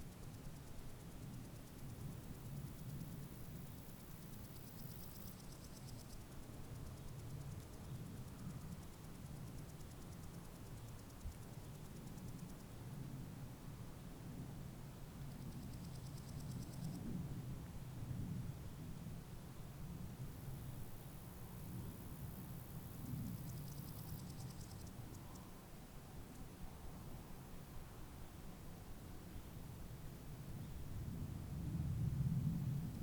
Frauenberger und Duschlberger Wald, Deutschland - Bavarian Forest in the summer
cicadas and other insects tschirping on two sides of a small forest street in the bavarian forest near the border..
Recorder: Zoom H5, no treatments or effects